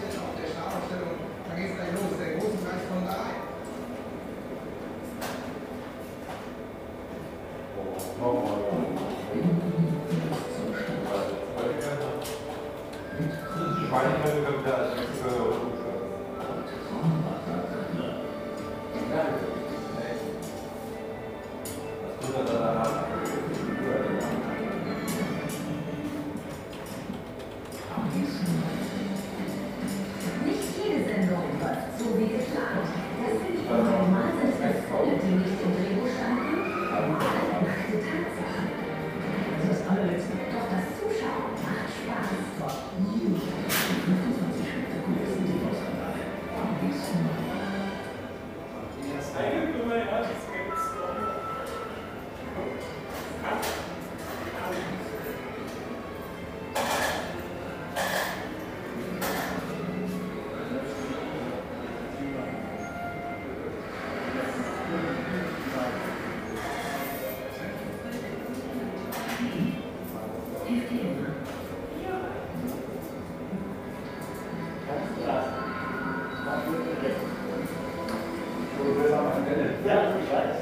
dresden airport, gambling & internet joint.
recorded apr 26th, 2009.
Eads EFW, Dresden, Germany